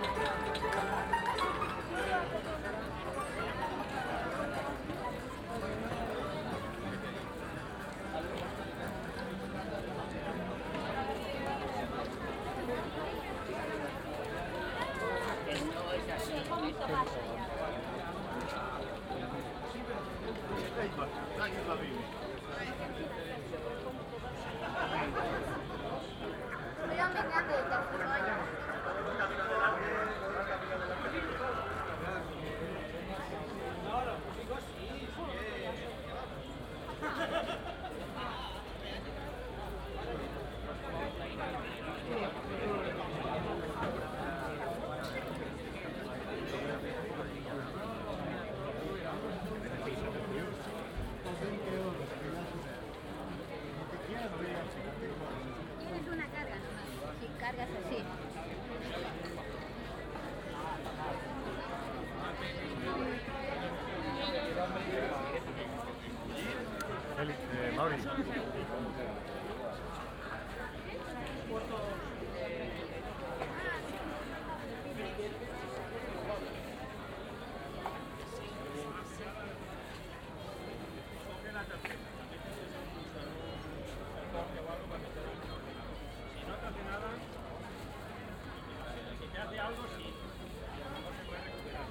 Calle de Fray Ceferino González, Madrid, Spain - Rastro field recording
Rastro Field recording ( Organillo included, typ. traditional tune)
Zoomh1+Soundman – OKM II Classic Studio Binaural